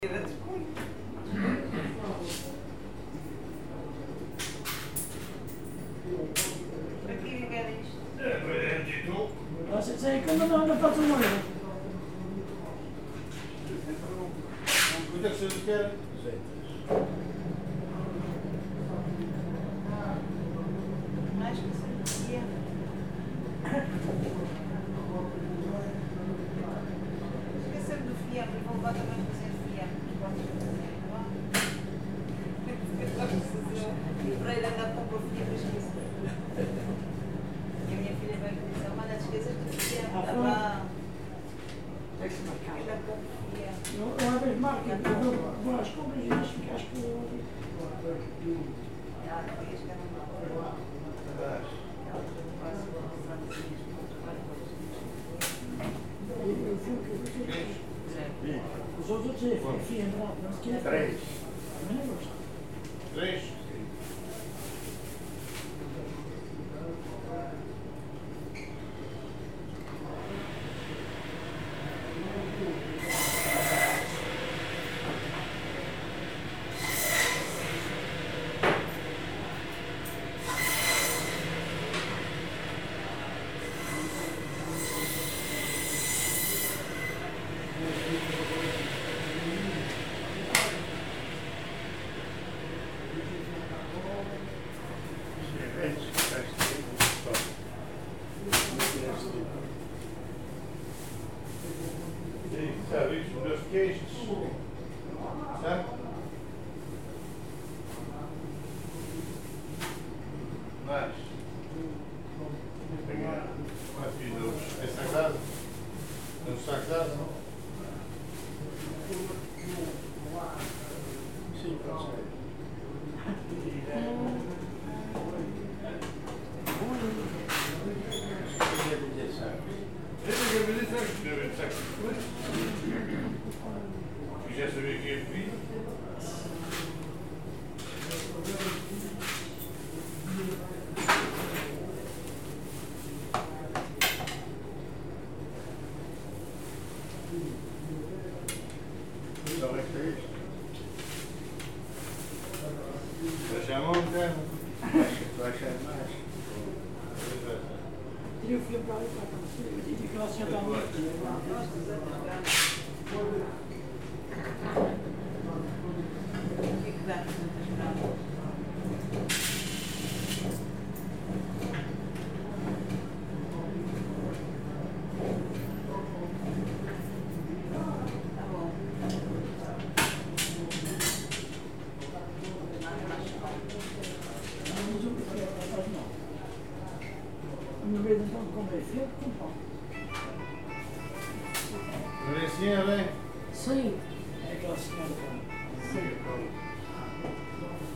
R. da Sé, Angra do Heroísmo, Portugal - Talho Mercado duque de Bragança
People shopping at a butcher shop in the Duke of Braganza market. Recorded with Zoom Hn4 Pro.